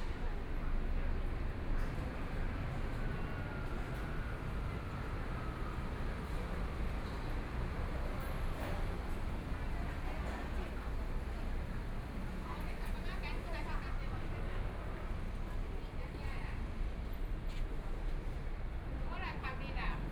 {
  "title": "Nanjing W. Rd., Datong Dist. - Walking on the road",
  "date": "2014-02-28 14:05:00",
  "description": "Traffic Sound, Sunny weather, Pedestrian, Various shops voices\nPlease turn up the volume a little\nBinaural recordings, Sony PCM D100 + Soundman OKM II",
  "latitude": "25.05",
  "longitude": "121.51",
  "timezone": "Asia/Taipei"
}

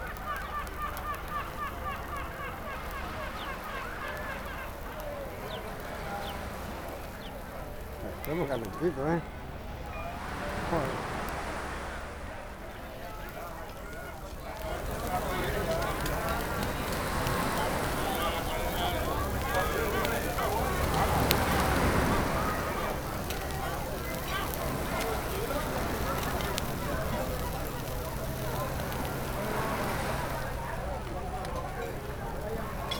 {
  "title": "Málaga, España - Very hot embers / Brasas Calentitas",
  "date": "2012-07-18 14:30:00",
  "description": "the key of a great Espeto (grilled sardines) / La clave de un buen espeto",
  "latitude": "36.72",
  "longitude": "-4.38",
  "altitude": "4",
  "timezone": "Europe/Madrid"
}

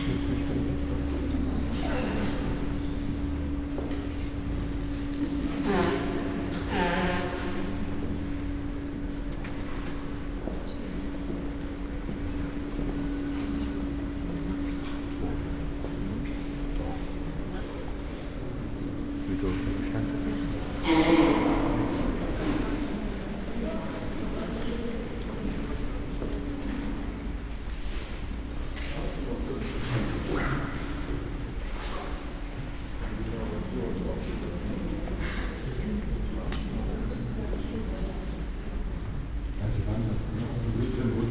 {
  "title": "Sankt Maria in Lyskirchen, An Lyskirchen, Köln, Deutschland - church ambience",
  "date": "2018-01-07 14:30:00",
  "description": "church Sankt Maria in Lyskirchen, Köln, ambience, walking around\n(Sony PCM D50, Primo EM172)",
  "latitude": "50.93",
  "longitude": "6.96",
  "altitude": "46",
  "timezone": "Europe/Berlin"
}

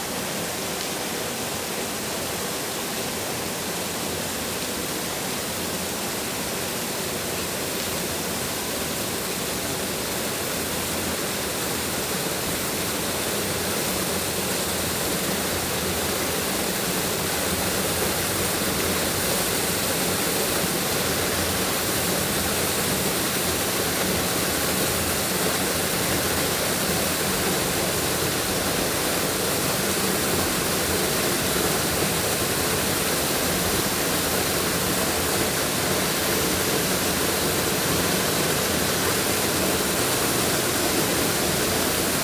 {
  "title": "La Louvière, Belgium - Lift for boat",
  "date": "2018-08-15 11:50:00",
  "description": "This is a lift for boats. It's working only with water and nothing with engines. Here, it's a walk around the lift, from the bottom to the top, a small boat is ascending the canal. The boat is called Ninenix and have no IMO number. Very windy day, bad weather and curious span effects because lifts are moving very huge quantities of moving water.",
  "latitude": "50.49",
  "longitude": "4.18",
  "altitude": "106",
  "timezone": "GMT+1"
}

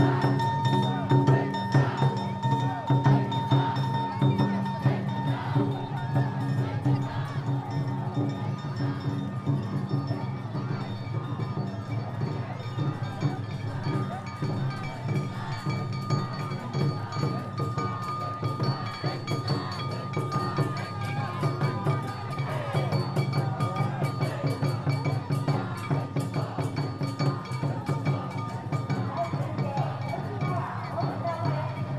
{
  "title": "Japan, Fukuoka, Kitakyushu, Tobata Ward, Shinike, ヨイトサ広場 - Tobata Gion Oyamagasa Lantern Festival Opening",
  "date": "2017-08-07",
  "description": "The start of the lantern festival is marked.",
  "latitude": "33.89",
  "longitude": "130.83",
  "altitude": "16",
  "timezone": "Asia/Tokyo"
}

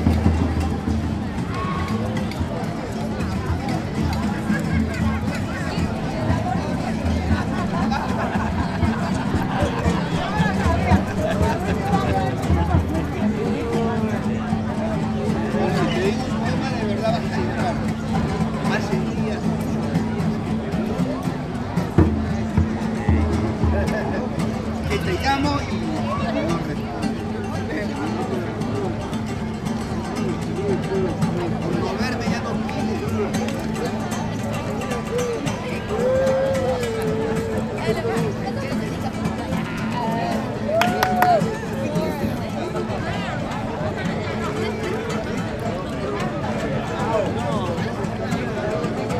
{
  "title": "Vondelpark, saturday picknicking",
  "date": "2011-10-01 17:15:00",
  "description": "last warm summer days, vondelpark is packed with people, group of junkies making music.",
  "latitude": "52.36",
  "longitude": "4.87",
  "altitude": "5",
  "timezone": "Europe/Amsterdam"
}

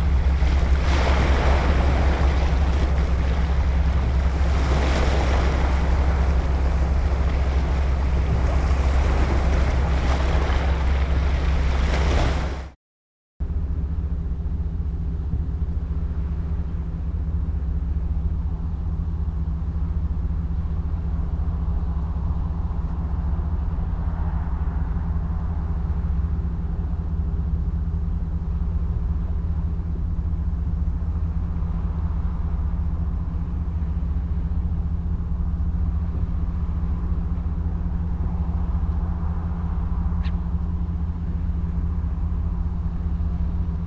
{
  "title": "Saemangeum seawall at night (새만금 방조제)",
  "date": "2017-05-04 22:00:00",
  "description": "The Samangeum Seawall (새만금 방조제) was completed in 2006 and extends out into the West Sea. Arriving at night we could hear a constant, very dense and largely undifferentiated sound coming from activity out to sea although there were few lights visible on the ocean. The seawall is near the large port of Gunsan. A continuous recording was made, and various filters have been applied so as to explore aspects of the sound that was recorded.",
  "latitude": "35.89",
  "longitude": "126.52",
  "timezone": "Asia/Seoul"
}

